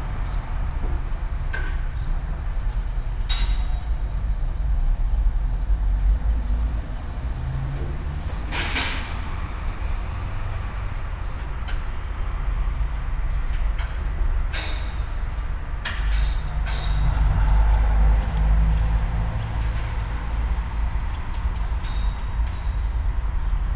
all the mornings of the ... - aug 7 2013 wednesday 07:07